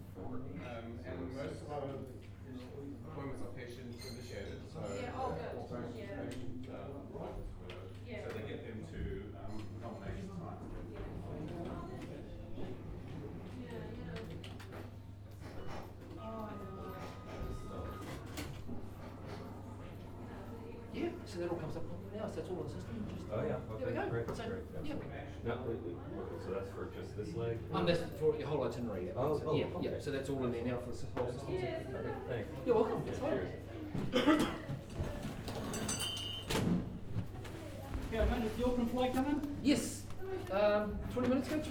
neoscenes: ada symposium clean-up
Wanganui Central, New Zealand, 12 December